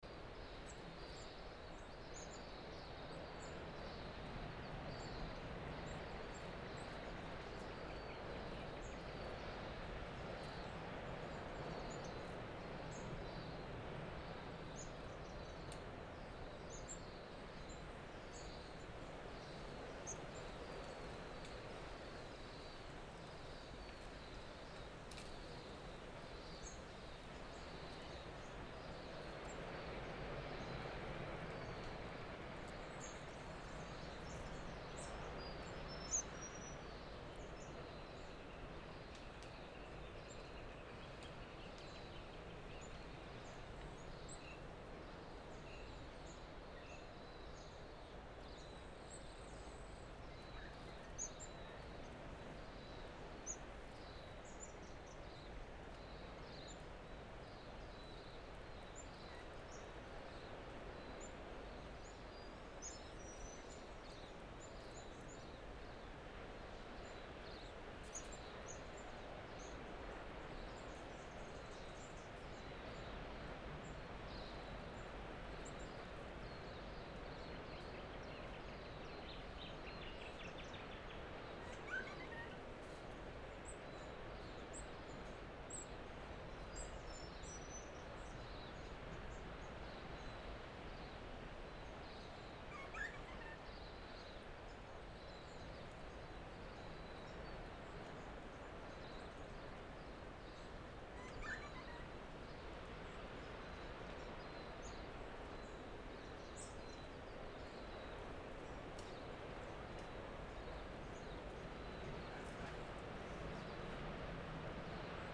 Royal National Park, NSW, Australia - Picking up my microphone in the coastal forest in the morning
A few minutes of the morning ambience as you slowly start to hear me enter up the hillside to collect my recording. A quick check of the recorder, it worked? Yes! (I've come to pick up my recorder before only to find that I didn't set it up properly and it only recorded a few hours which has been very dissapointing!)
Recorded with an AT BP4025 into a Tascam DR-680.
BixPower MP100 was used as an external battery, it still had about half it's battery life left when I picked it up the next morning.